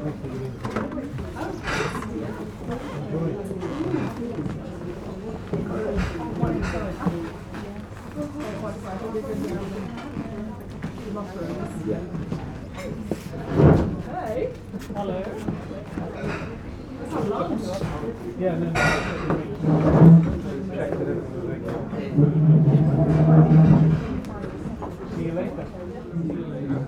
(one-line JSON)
{"title": "Déjeuner Anglais, Snape, 6-4-22", "date": "2022-04-06 12:34:00", "description": "Typically polite english clientelle at lunch overlooking the River Alde and its reed beds. The mics are on the floor. Most of the people are on the left and the kitchen door is on the right.\nMixPre 6 II with 2 Sennheiser MKH 8020s", "latitude": "52.16", "longitude": "1.50", "altitude": "3", "timezone": "Europe/London"}